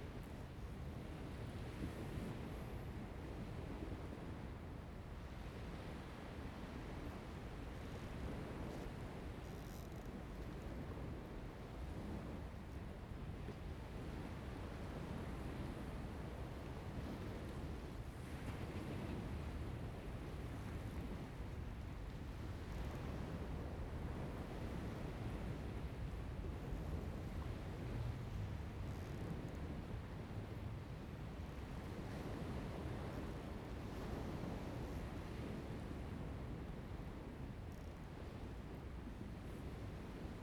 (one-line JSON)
{"title": "湖井頭, Lieyu Township - At the beach", "date": "2014-11-04 10:11:00", "description": "At the beach, Sound of the waves, Birds singing\nZoom H2n MS +XY", "latitude": "24.44", "longitude": "118.23", "altitude": "4", "timezone": "Asia/Shanghai"}